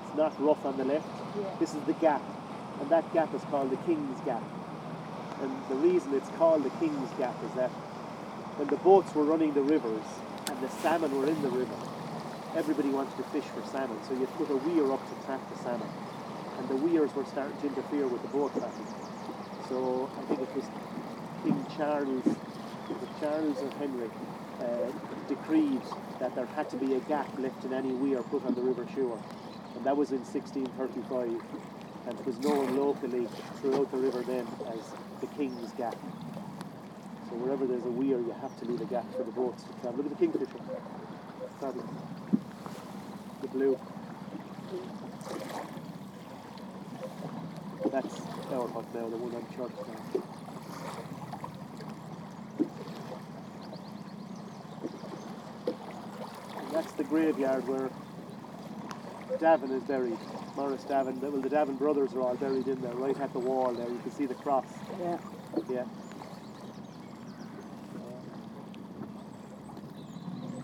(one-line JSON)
{"title": "Ballynaraha, Co. Tipperary, Ireland - Ralph Boat Trip", "date": "2014-03-31 12:45:00", "description": "Sounding Lines\nby artists Claire Halpin and Maree Hensey", "latitude": "52.36", "longitude": "-7.56", "altitude": "14", "timezone": "Europe/Dublin"}